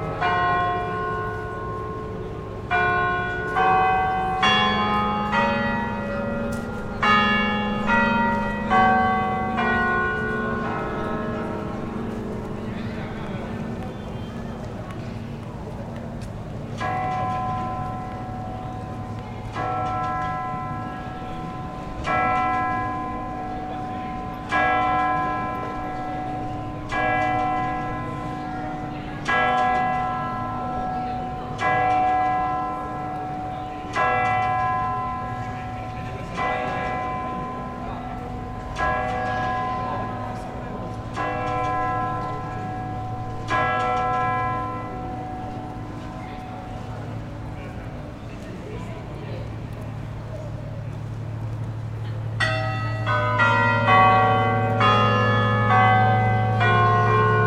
Kungsholmen, Stockholm, Suecia - town hall bells
Les dotze en punt a l'Stadshus.
Stadshus at twelve o'clock.
Las doze en punto en Stadhus